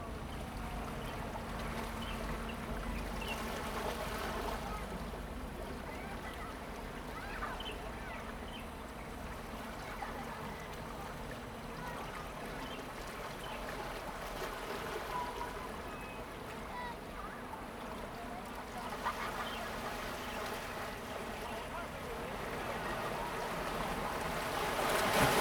大武崙澳仔漁村, Keelung City - On the coast
sound of the waves, On the coast
Zoom H2n MS+XY +Sptial Audio